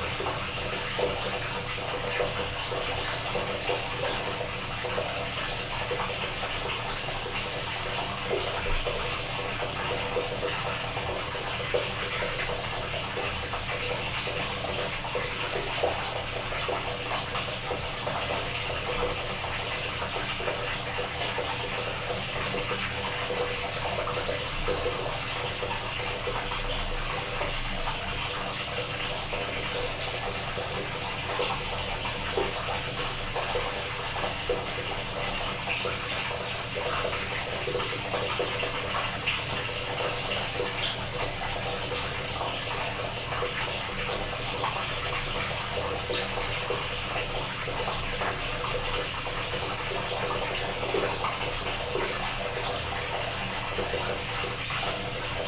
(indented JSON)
{
  "title": "Antalieptė, Lithuania, in the well",
  "date": "2018-04-29 17:50:00",
  "description": "small microphoms in the well near old hydroelectric power station",
  "latitude": "55.66",
  "longitude": "25.88",
  "altitude": "117",
  "timezone": "Europe/Vilnius"
}